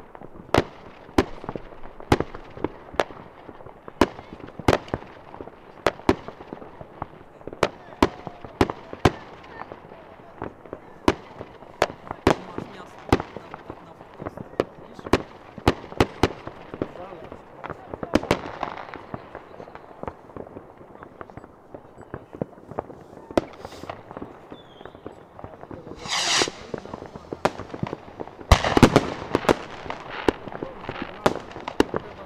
New Year celebration. Fireworks, people shouting. Some talks near microphone.
January 2008